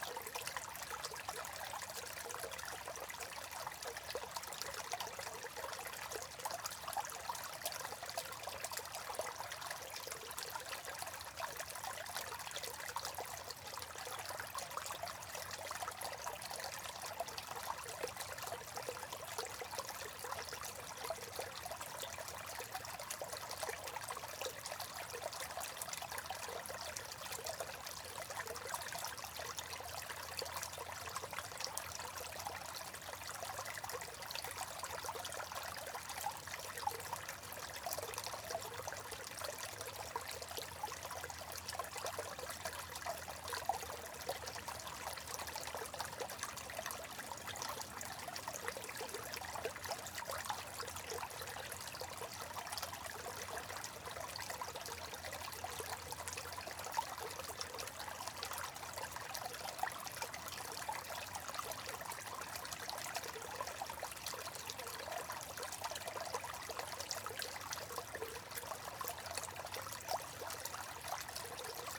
Went out for a walk with my recording gear to a woods not far from my home. Due to the amount of rain we have had recently there is quite a lot of water running off the fields and it has created a few extra streams in this woods, which are usually dry in the summer. I found a nice little spot to record the trickling of the water.
Weather - Cold, Dry, partly cloudy and a light breeze
Microphone - 2 x DPA4060
Recorder - Sound Devices Mixpre-D & Tascam DR100
Cornwall, UK